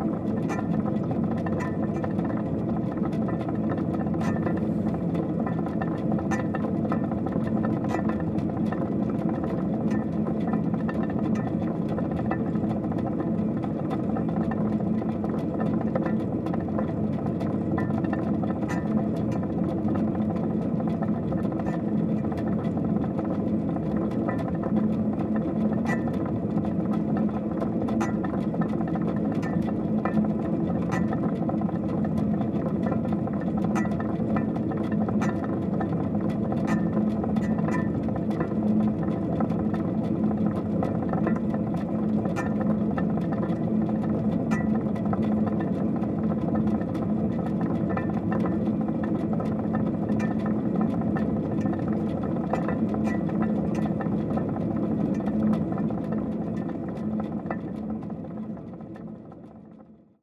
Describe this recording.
In an underground mine, a very big fan (diameter 3 meters) naturally turning with air.